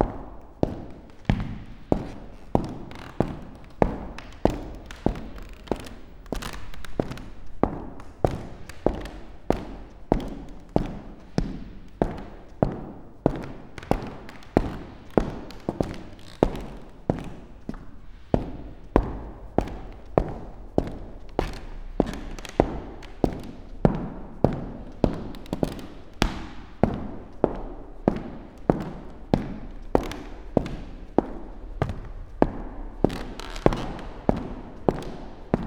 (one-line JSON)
{"title": "Art Galery Maribor - walking with technological ears close to the old parquet floor", "date": "2014-10-01 15:02:00", "latitude": "46.56", "longitude": "15.64", "altitude": "273", "timezone": "Europe/Ljubljana"}